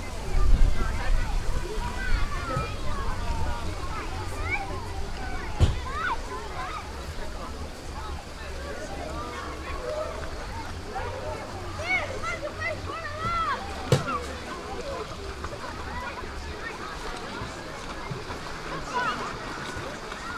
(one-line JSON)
{"title": "Porto de Rei, Portugal - Parque Fluvial de Porto de Rei", "date": "2011-07-09 15:00:00", "description": "Parque fluvial de Porto de Rei. Portugal. Mapa Sonoro do Rio Douro River side, Porto de Rei, Portugal. Douro River Sound Map", "latitude": "41.12", "longitude": "-7.91", "altitude": "48", "timezone": "Europe/Lisbon"}